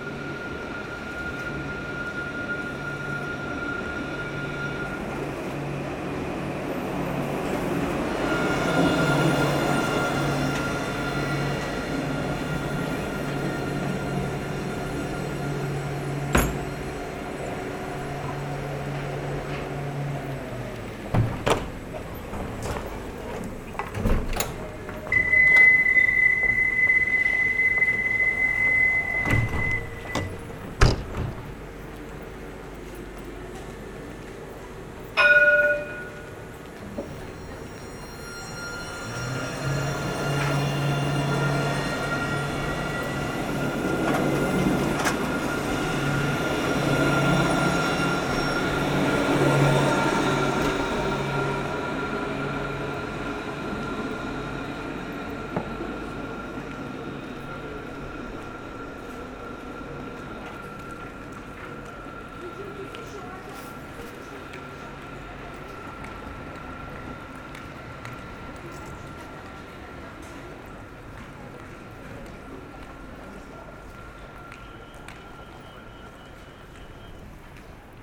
Tours, France - Tram into the main street
Into the main commercial street of Tours, tramway are passing by during a quiet morning. This tramway sound is specific to Tours city. It's not the same elsewhere.
14 August 2017, ~10:00